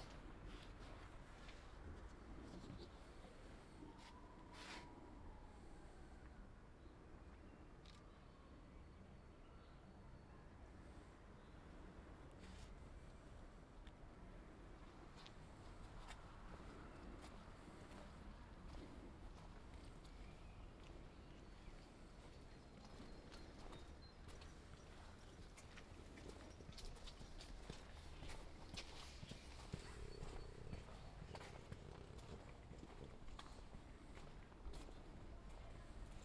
Ascolto il tuo cuore, città. I listen to your heart, city. Several chapters **SCROLL DOWN FOR ALL RECORDINGS** - Afternoon walk with bottles in the garbage bin in the time of COVID19 Soundwalk
"Afternoon walk with bottles in the garbage bin in the time of COVID19" Soundwalk
Chapter XLV of Ascolto il tuo cuore, città. I listen to your heart, city
Monday April 13th 2020. Short walk in San Salvario district in Pasquetta afternoon, including discard of bottles waste, thirty four days after emergency disposition due to the epidemic of COVID19.
Start at 2:36 p.m. end at 3:00 p.m. duration of recording 23'34''
The entire path is associated with a synchronized GPS track recorded in the (kml, gpx, kmz) files downloadable here:
Torino, Piemonte, Italia, 13 April 2020